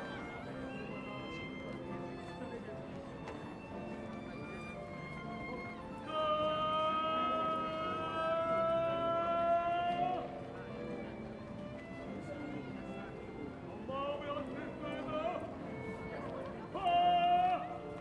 Recorded during a saturday afternoon. Kichijouji and Inokashira Koen are very popular places among street performers and artists all around Tokyo. Here you can hear the mixture of several performances going on at once. Recorded with Zoom H2N.
Mitaka-shi, Tōkyō-to, Japan